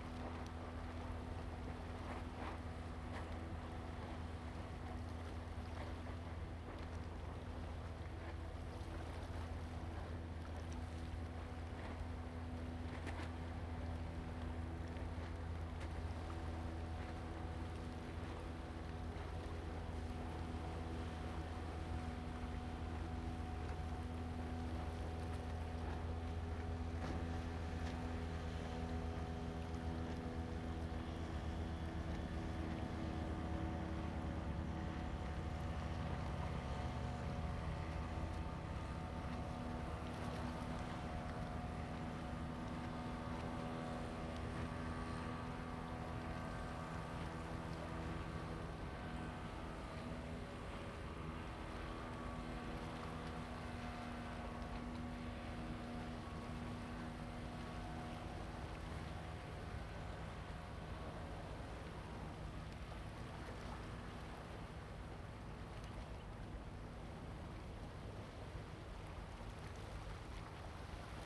{"title": "落日亭, Hsiao Liouciou Island - Waves and tides", "date": "2014-11-02 08:34:00", "description": "On the coast, Wave and tidal\nZoom H2n MS+XY", "latitude": "22.32", "longitude": "120.35", "altitude": "2", "timezone": "Asia/Taipei"}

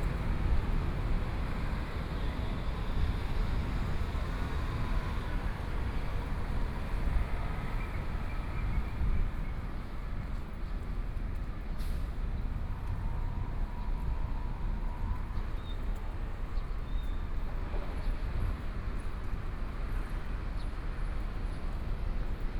{"title": "鹽埕區光明里, Kaoshiung City - soundwalk", "date": "2014-05-14 06:46:00", "description": "In the morning, Walking in the streets, Traffic Sound", "latitude": "22.62", "longitude": "120.29", "altitude": "8", "timezone": "Asia/Taipei"}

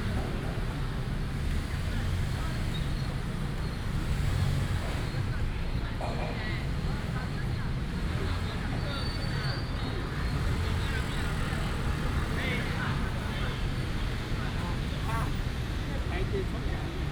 {"title": "建國公園, 新竹市東區, Taiwan - in the Park", "date": "2017-01-16 08:43:00", "description": "in the Park, Traffic Sound, Many older people in the park", "latitude": "24.80", "longitude": "120.97", "altitude": "27", "timezone": "GMT+1"}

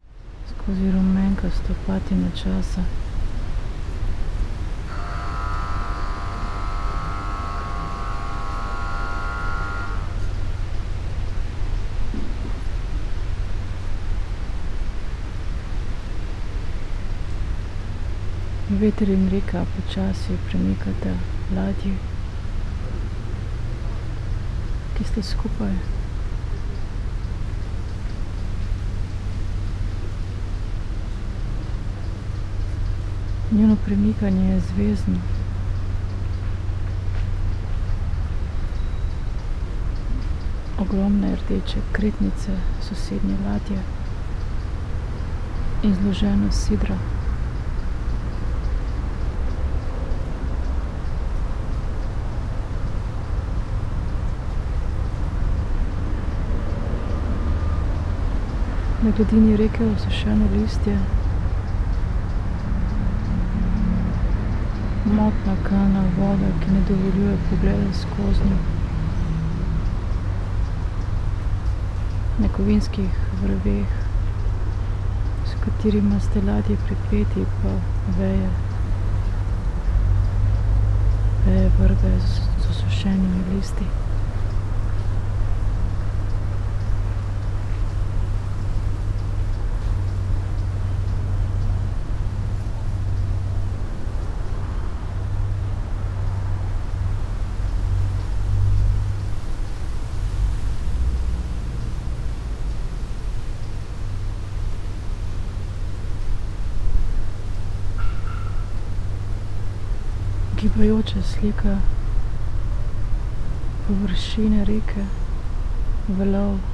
{"title": "river ship Gesa, islands tail, Mitte, Berlin, Germany - wind, shadows, river Spree", "date": "2015-09-02 13:42:00", "description": "spoken words, streets and river traffic, wind through willow tree\nSonopoetic paths Berlin", "latitude": "52.51", "longitude": "13.41", "altitude": "34", "timezone": "Europe/Berlin"}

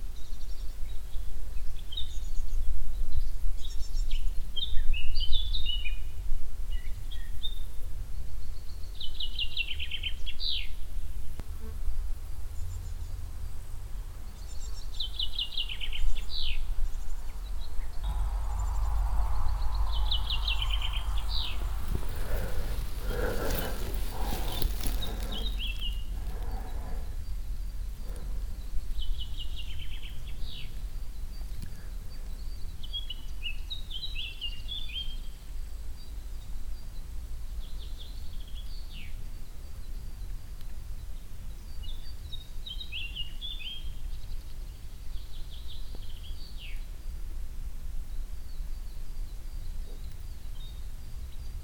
{"title": "näideswald, wheat field", "date": "2011-07-12 14:35:00", "description": "Laying at a wheat field. The crickets and birds, a dog breathing and passing, some traffic in the distance a plane crossing the sky.\nRecorded on a hot summer day in the morning time.\nNäidserwald, Weizenfeld\nAuf einem Weizenfeld liegend. Die Grillen und Vögel, ein Hund schnauft und läuft vorbei, etwas Verkehr in der Ferne, ein Flugzeug am Himmel. Aufgenommen morgens an einem heißen Sommertag.\nNäidserwald, champ de blé\nCouché dans un champ de blé. Les grillons et les oiseaux, un chien haletant qui passe, quelques véhicules dans le lointain, un avion dans le ciel. Enregistré le matin, un jour chaud en été.\nProject - Klangraum Our - topographic field recordings, sound objects and social ambiences", "latitude": "50.02", "longitude": "6.05", "altitude": "431", "timezone": "Europe/Luxembourg"}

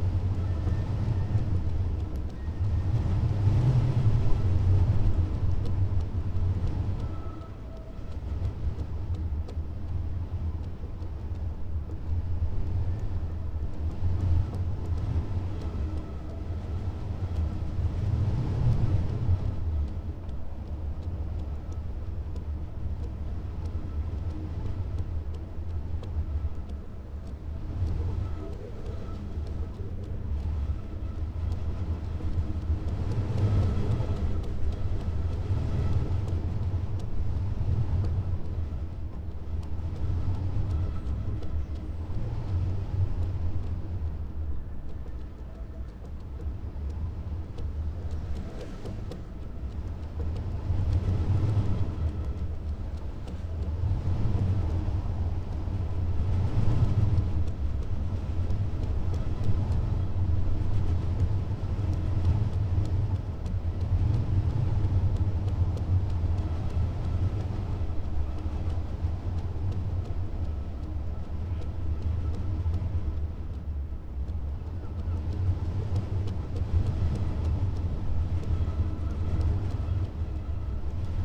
{"title": "Crewe St, Seahouses, UK - flagpole and iron work in wind ...", "date": "2021-11-18 07:25:00", "description": "flagpole lanyard and iron work in wind ... xlr sass to zoom h5 ... bird calls from ... herring gull ... starling ... grey heron ... jackdaw ... lesser black-backed gull ... unedited ... extended recording ...", "latitude": "55.58", "longitude": "-1.65", "timezone": "Europe/London"}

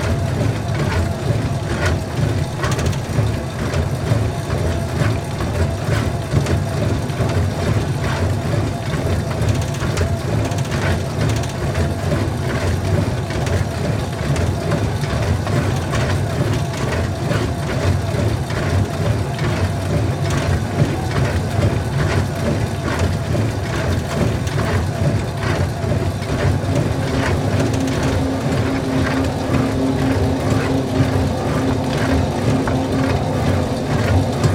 {"title": "Muhlenberg College Hillel, West Chew Street, Allentown, PA, USA - Treadmill", "date": "2014-12-08 09:46:00", "latitude": "40.60", "longitude": "-75.51", "altitude": "121", "timezone": "America/New_York"}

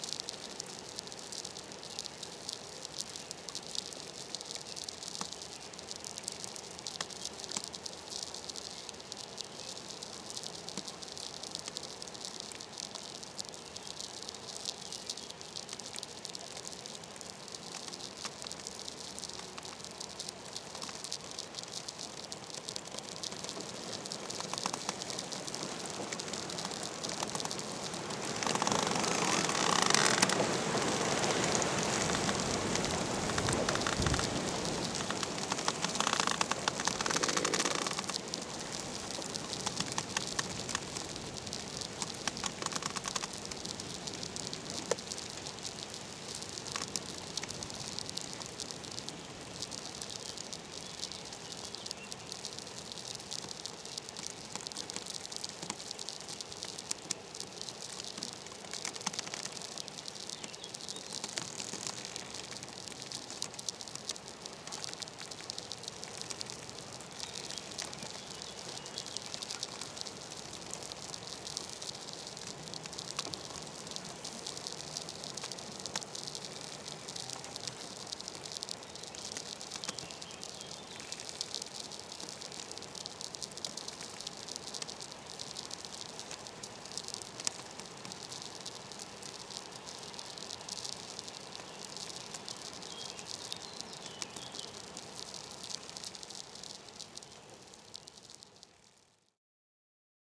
{"title": "unnamed road, Lithuania, bush in a wind and VLF", "date": "2020-06-13 17:30:00", "description": "a bush creaking in a wind (omni mics), and VLF sparkling in the air", "latitude": "55.44", "longitude": "25.76", "altitude": "179", "timezone": "Europe/Vilnius"}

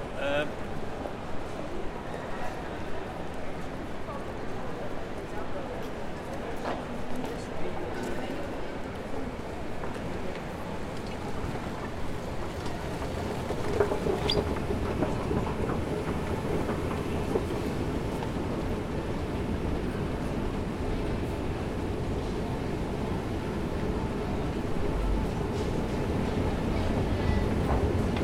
{"title": "stationshal, Utrecht, Niederlande - utrecht main station atmosphere 2019", "date": "2019-04-03 17:40:00", "description": "Sound of the machines that check the tickets, the international train to Düsseldorf is announced, walk to the platform, the train arrives. Test how the sound changed after seven years and a new station hall.\nRecorded with DR-44WL.", "latitude": "52.09", "longitude": "5.11", "altitude": "10", "timezone": "Europe/Amsterdam"}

